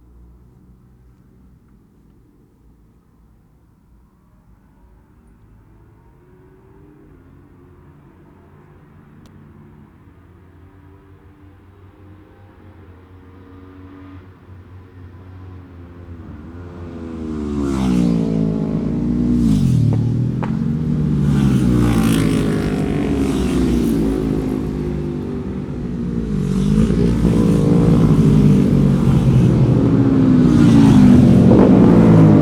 Scarborough UK - Scarborough Road Races 2017 ... lightweights ...
Cock o' the North Road Races ... Oliver's Mount ... Super lightweight practice ...
June 2017